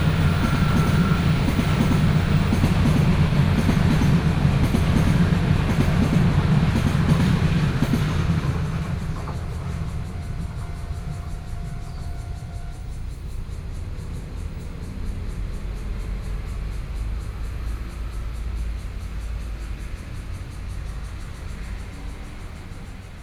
Zhonghua Rd., Hualien City - Train traveling through
In large trees, Traffic Sound, Cicadas sound, Train traveling through
29 August, 09:34